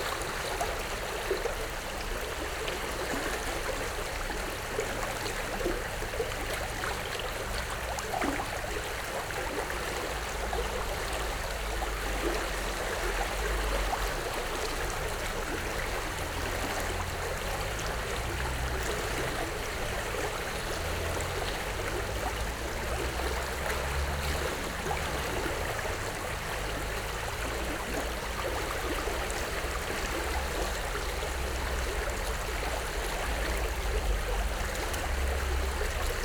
Emonska cesta, Ljubljana - flow of Gradaščica river
small Gradaščica river carries a lot of water and is flowing quickly after rainy days
(Sony PCM D50, DPA4060)